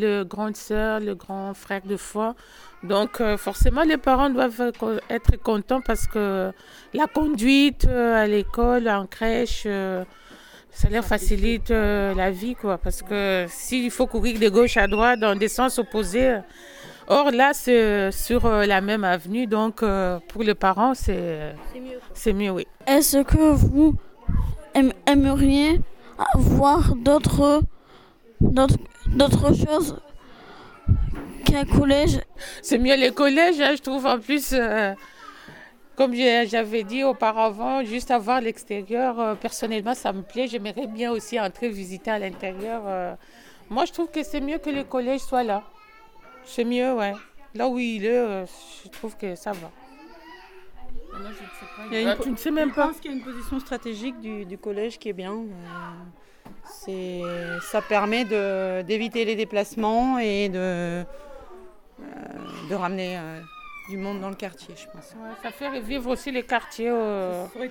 Rue dOran, Roubaix, France - Crèche La Luciole
Interview d'Ingrid et Mireille, animatrices